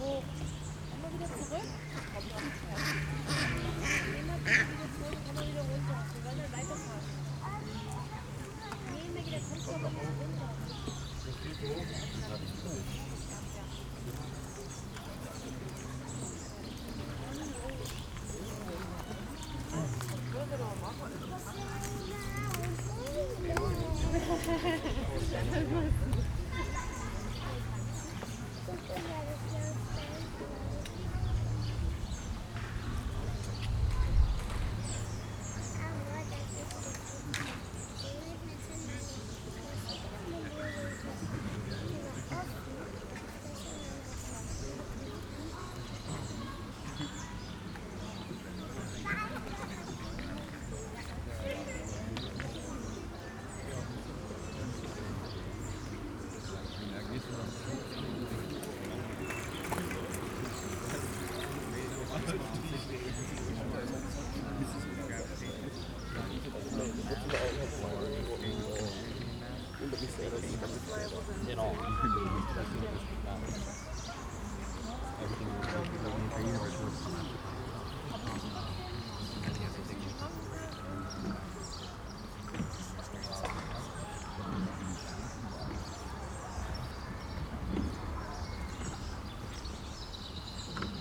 Karl-Heine-Kanal, Leipzig, Germany - canal ambience
ambience at Karl-Heine-Kanal, Lindenau, Leipzig
(Sony PCM D50, DPA4060)